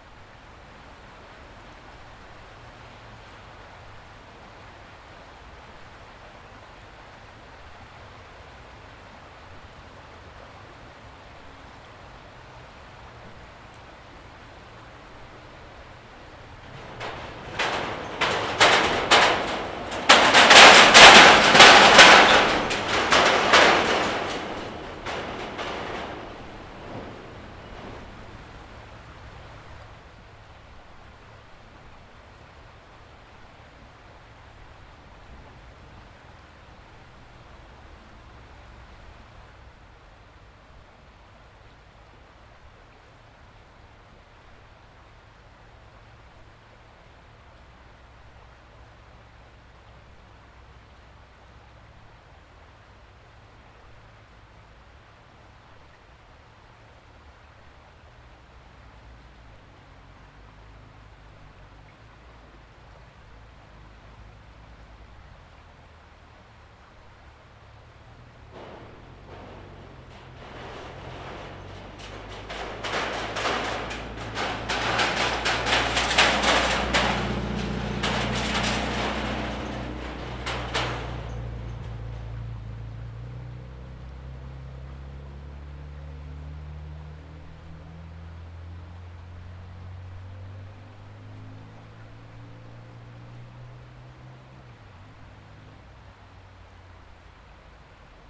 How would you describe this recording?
Recording of the river as cars pass occasionally over the nearby metal bridge.